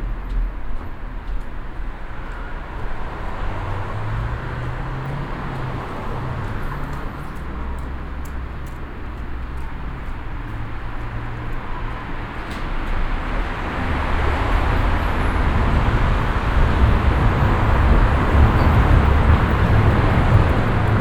berlin, yorckstraße, underpass to schöneberg, position 2